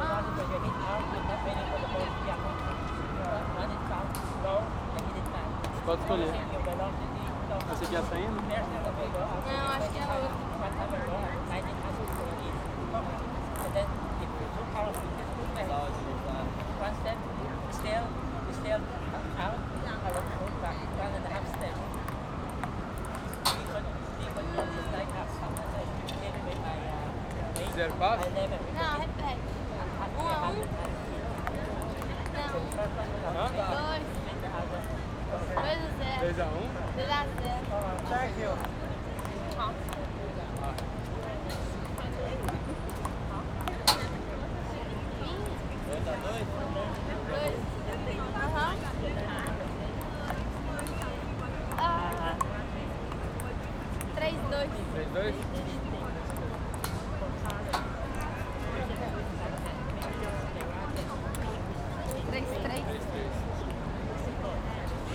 Ping Pong tables at Bryant Park.
New York, USA